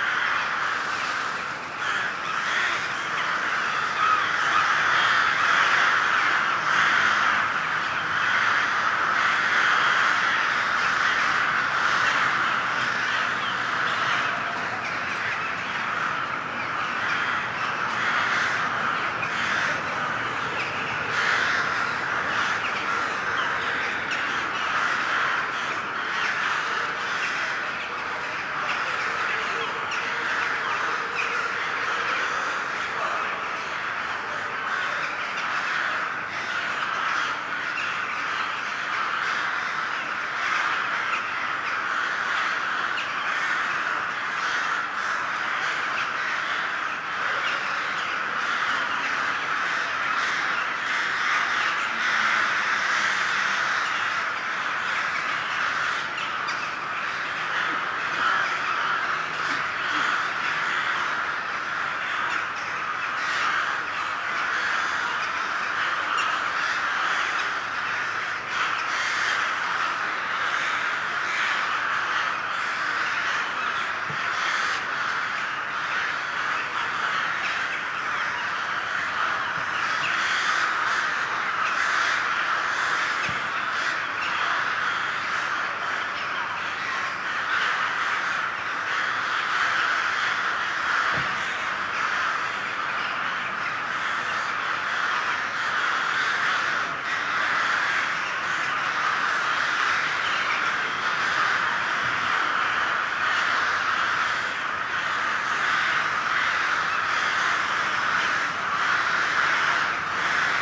Parcul Cișmigiu - Attack of the crows
The crows awaken in the Cismigiu Gardens.
Recorded using a Tascam DR 22WL.